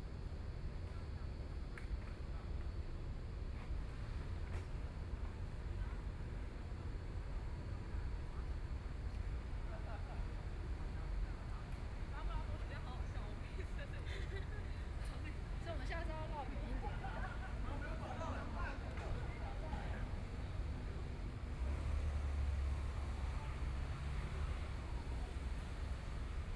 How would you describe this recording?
Night in the park, Traffic Sound, Aircraft flying through, Binaural recordings, Zoom H4n+ Soundman OKM II